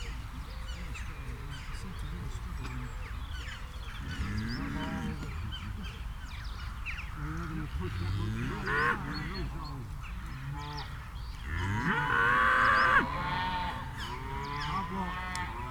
Sledmere - Playing bowls with a cow chorus ...
Playing bowls with a cow chorus ... bowls rink is in a field with a large herd of cattle ... open lavalier mics clipped to sandwich box ... bird calls ... jackdaw ... house martins ... pied wagtail ... traffic noise ...